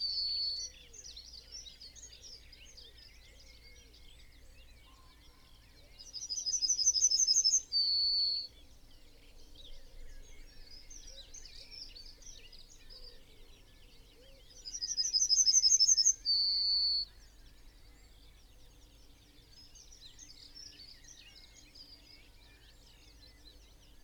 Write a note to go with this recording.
yellowhammer song and call ... open lavalier mics clipped to bush ... bird song ... call ... from ... chaffinch ... dunnock ... wren ... pheasant ... blackbird ... song thrush ... crow ... whitethroat ... background noise ...